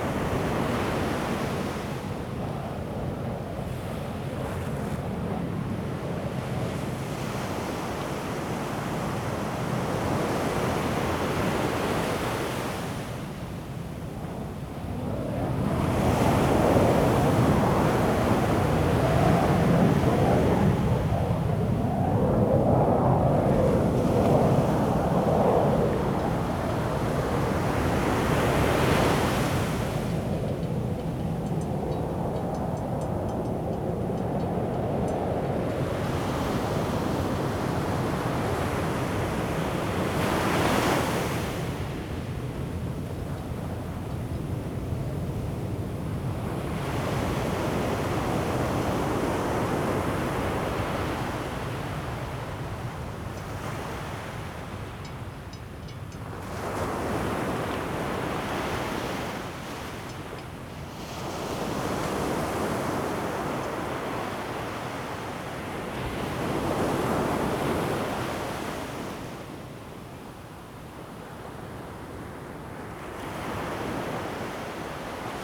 台東海濱公園, Taitung City - the waves
Waterfront Park, Beach at night, The sound of aircraft flying
Zoom H2n MS + XY